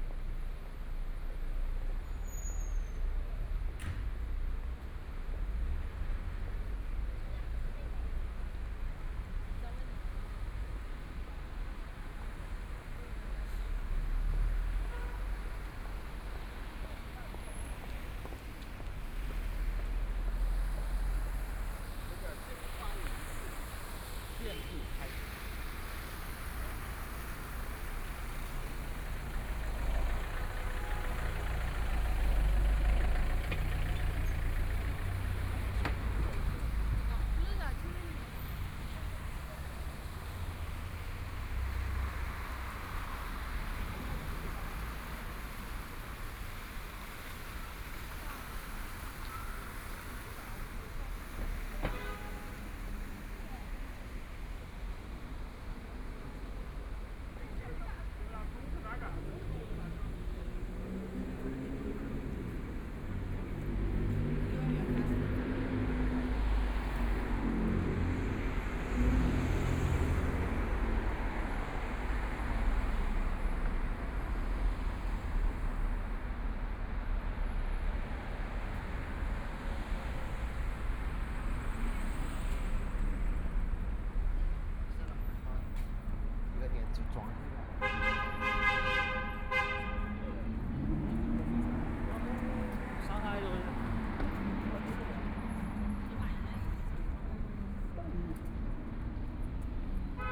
East Beijing Road, Shanghai - The night streets sound
Walking on the road, Binaural recording, Zoom H6+ Soundman OKM II
Shanghai, China, 30 November